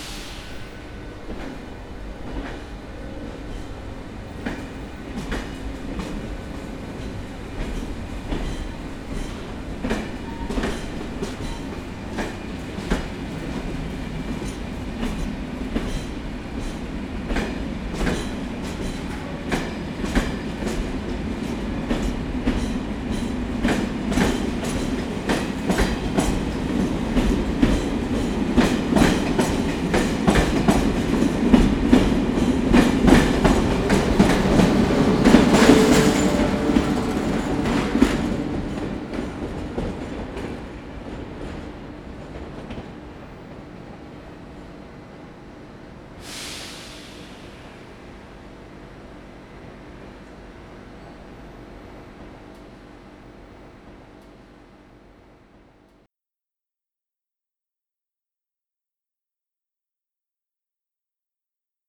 Gare, Strasbourg, France - ElectricTrain
Départ quai no4 du train à Destination de Lyon